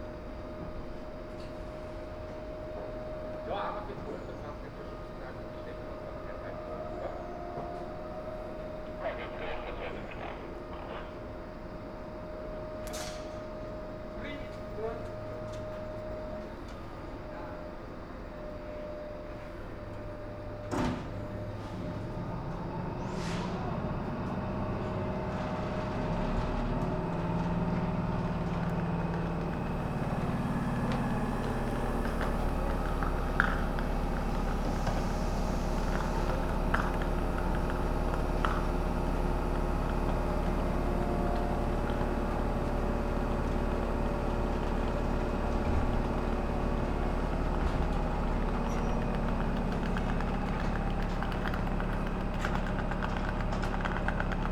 Spielfeld, Strass, Steiermark
austrian slovenian border, 10min stop, staff changes, holding mic out of the window.
Spielfeld, Austria, 16 November 2011